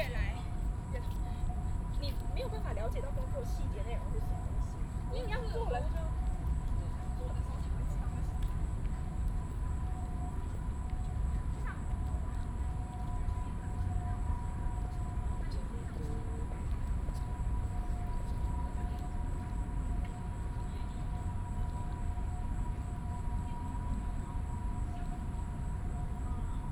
大安森林公園, 大安區, Taiwan - in the Park
in the Park, Traffic Sound